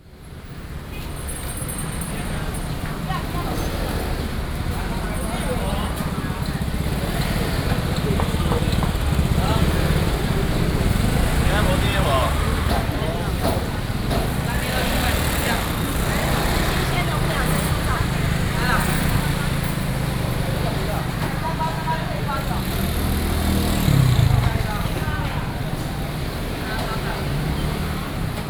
Fude St., Banqiao Dist., New Taipei City - Walking through the traditional market
Walking through the traditional market
Sony PCM D50+ Soundman OKM II
Banqiao District, New Taipei City, Taiwan, 17 June 2012, 07:43